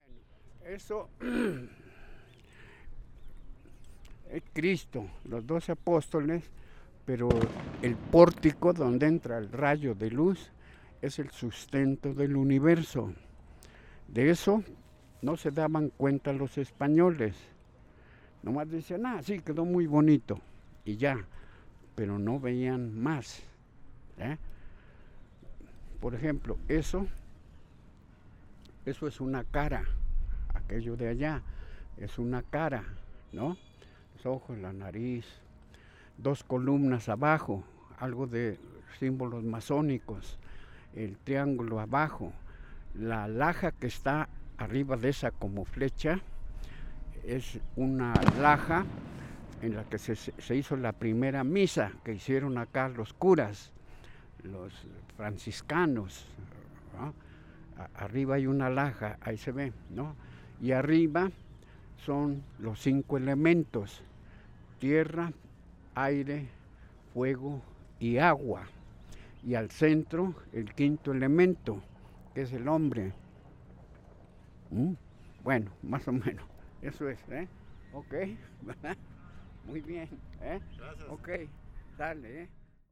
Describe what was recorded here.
Cholula, Couvent de l'Archange Gabriel, En déambulant dans le jardin, rencontre impromptue avec Isaac.